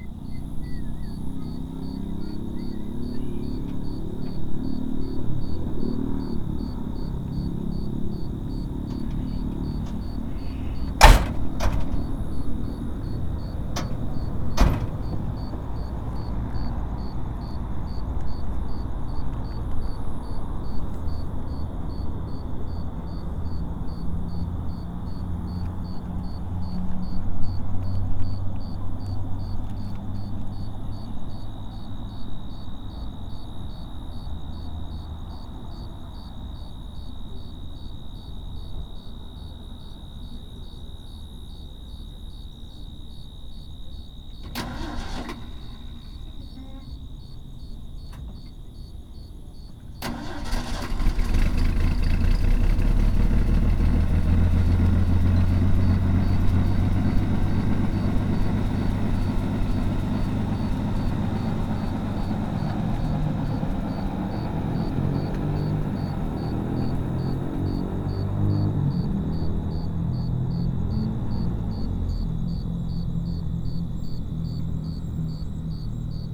Blvd. Campestre, Lomas del Campestre, León, Gto., Mexico - Crickets and an old Ford truck starting its engine at Cerro Gordo de Leon, Guanajuato. Mexico.
Crickets and an old Ford truck starting its engine at Cerro Gordo de Leon, Guanajuato. Mexico.
I made this recording on April 18th, 2019, at 9:16 p.m.
I used a Tascam DR-05X with its built-in microphones and a Tascam WS-11 windshield.
Original Recording:
Type: Stereo
Grillos y una vieja camioneta Ford arrancando su motor en el Cerro Gordo de León, Guanajuato. México.
Esta grabación la hice el 18 de abril 2019 a las 21:16 horas.
18 April, ~21:00